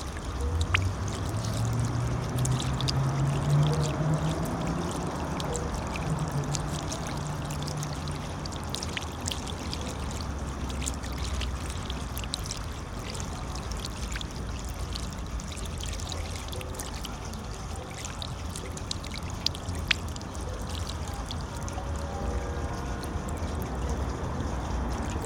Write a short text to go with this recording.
up close at the fountain in the small park facing the prva gimnazija, as some skaters sitting under a nearby tree strummed a few chords on a mandolin.